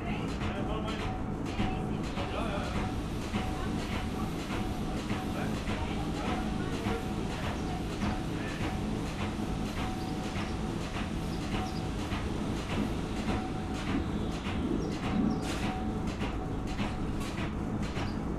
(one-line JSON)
{"title": "Maribor, Obrezna ulica - metal workshop, punch", "date": "2012-05-30 11:58:00", "description": "a punch or something similar at work", "latitude": "46.56", "longitude": "15.62", "altitude": "279", "timezone": "Europe/Ljubljana"}